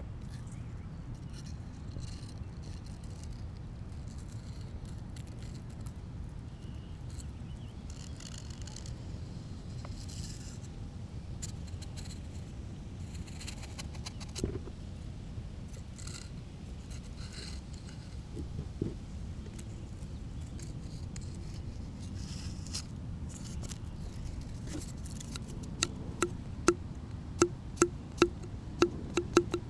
Washington Park, South Doctor Martin Luther King Junior Drive, Chicago, IL, USA - Summer Walk 1
Recorded with Zoom H2. Interactive walk through Washington Pk. Exploring the textures and rhythm of twigs bark and leaves.
Illinois, United States of America